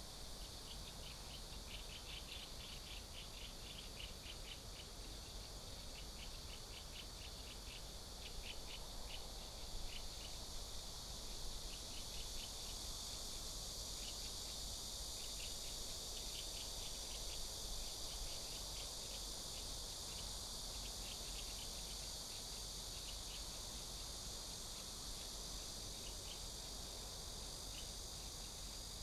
壯圍鄉東港村, Yilan County - Birdsong sound
In windbreaks, Near the sea, Cicadas sound, Birdsong sound, Small village
Sony PCM D50+ Soundman OKM II